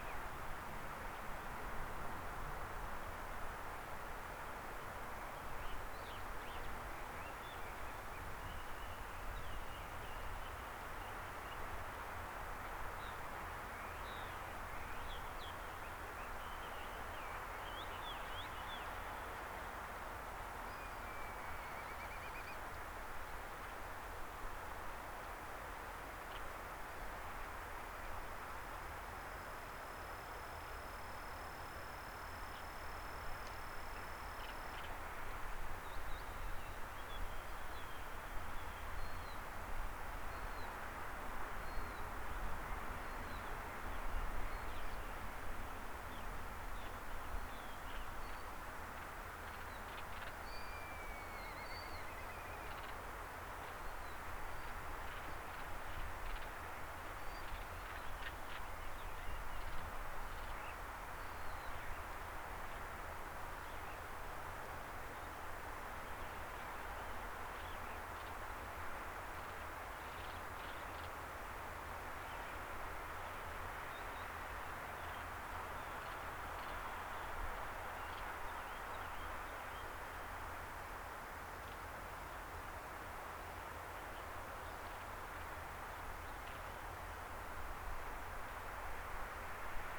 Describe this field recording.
Ling Kok Shan, with a height of 250m, located at the east part of Lamma Island, getting famous of its strange rocks. You can hear different kinds of bird and hawks calls, as well as some plane flying over. 菱角山高250米位於南丫島東部，有不少奇岩怪石。你可聽到不同鳥類和鷹的叫聲，以及飛機聲。, #Bird, #Hawk, #Eagle, #Seagull, #Crow, #Cricket, #Plane, #Wind